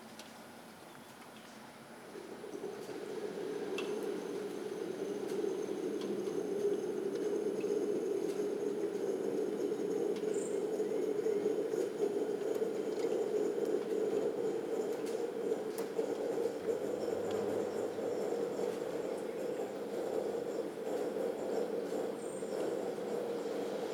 from/behind window, Mladinska, Maribor, Slovenia - soft rain, cafetiera
3 March 2014